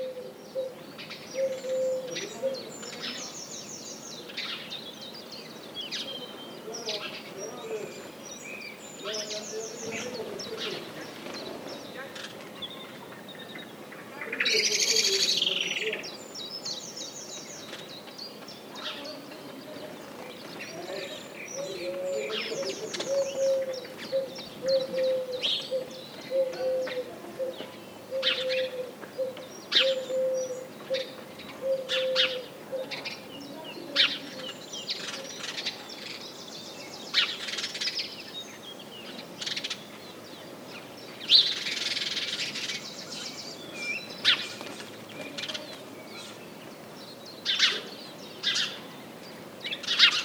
Chemin des Ronferons, Merville-Franceville-Plage, France - Birds during the pandemic
From the window, birds during the covid-19 pandemic, Zoom H6 & Rode NTG4+
France métropolitaine, France, 20 April 2020